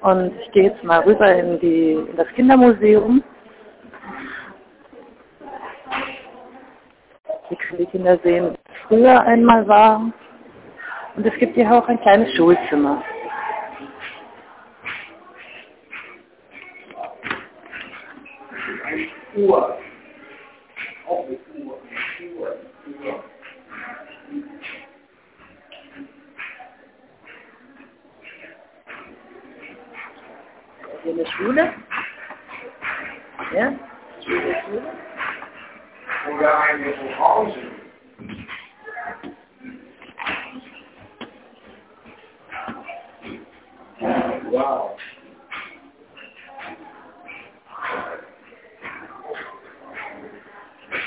Nationalmuseet, drinnen - Nationalmuseet, drinnen 1